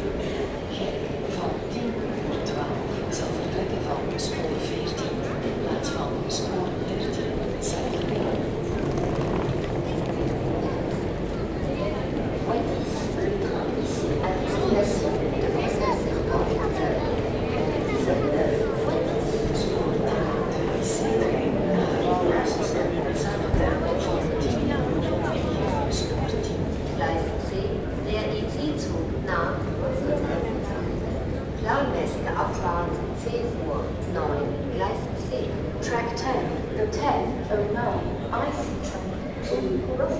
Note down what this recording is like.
Ambiance of one of the biggest train station of Brussels : the Bruxelles-Midi (french) or Brussel-Zuid (dutch). A walk in the tunnels, platform, a train leaving to Nivelles, escalator, the main station and going outside to Avenue Fonsny road.